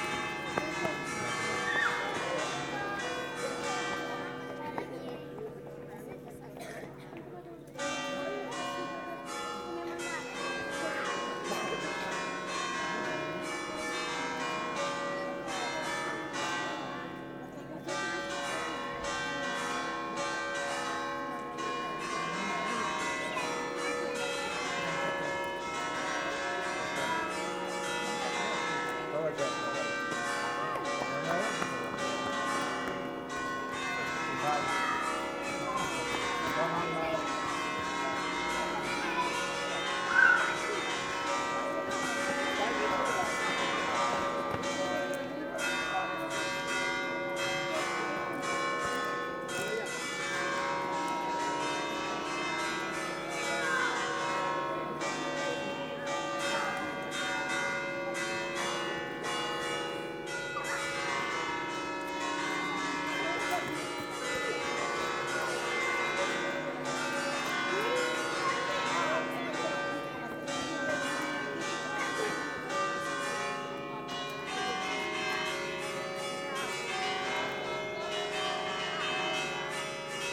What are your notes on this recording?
On the forecourt of the church, after the service, children are running with the bells ring. Sur le parvis de la basilique, après la messe, des enfants courent et les cloches sonnent.